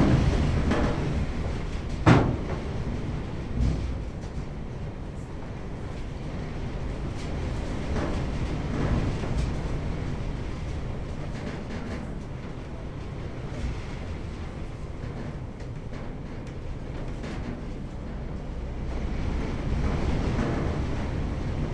Pampa Guanaco, Región de Magallanes y de la Antártica Chilena, Chile - storm log - abandoned short wave transmitter station
Abandoned short wave transmitter station, inside metal shelter, wind W 45km/h.
Aeropuerto Pampa Guanaco is a rural airport near Inútil Bay, serving Camerón in the Timaukel commune.